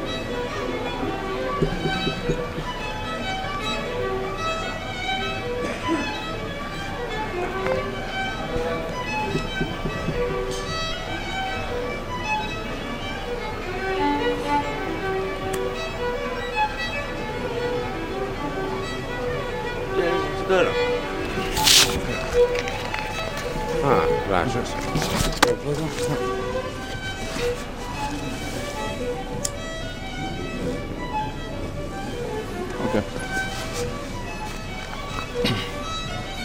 sitting down to the terrace of the tapas cafe, a violinist is playing irish folk tune near the place, I give a light to someone, having a brandy
Nerja, Málaga, Spain, December 2007